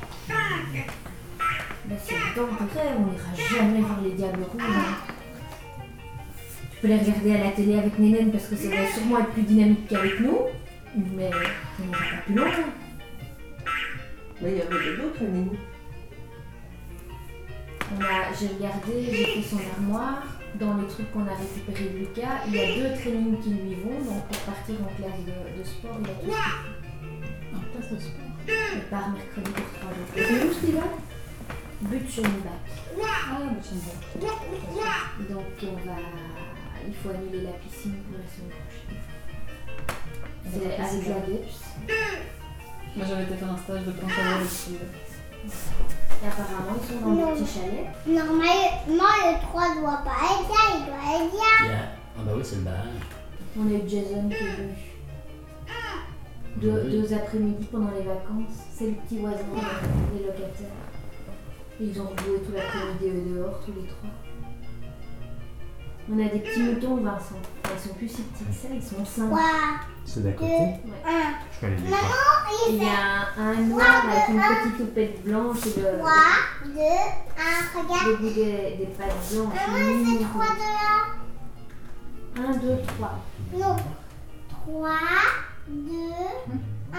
Court-St.-Étienne, Belgique - Family life
A classical family life in Belgium. In a peaceful way of life, people discuss and young children plays.
Court-St.-Étienne, Belgium